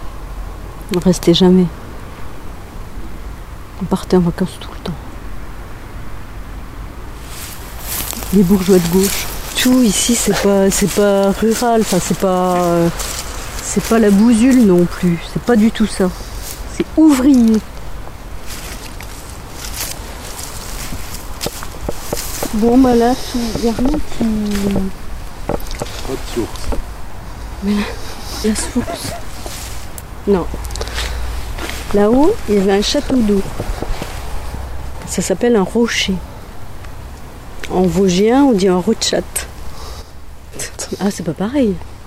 Agnès revient dans l'ancienne école, lieu de son enfance.
Dans le cadre de l’appel à projet culturel du Parc naturel régional des Ballons des Vosges “Mon village et l’artiste”